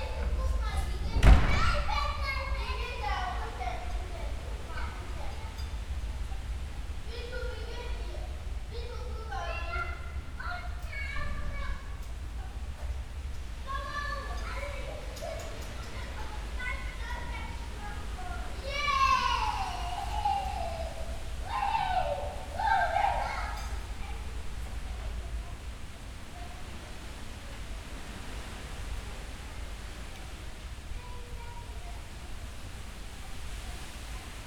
Bürknerstr., Neukölln, Berlin - Hinterhof, backyard, wind, ambience
Berlin, Bürknerstr., Hinterhof, narrow yard with two high trees, fresh wind and domestic sounds from open windows.
(SD702, DPA4060)